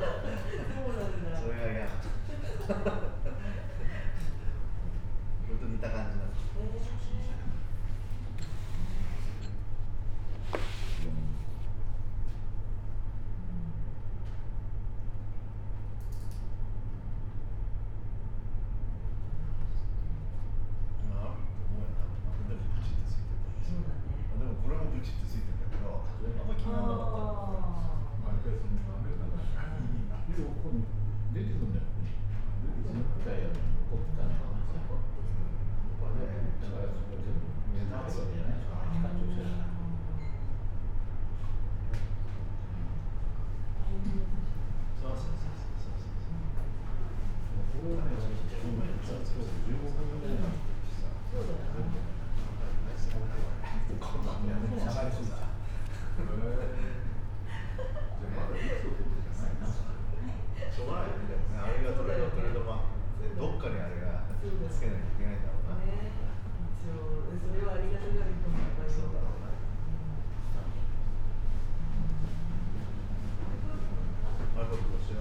koishikawa korakuen gardens, tokyo - enjoying afterwards
wooden restaurant atmosphere with a kimono dressed lady
Tokyo, Japan, 13 November, ~17:00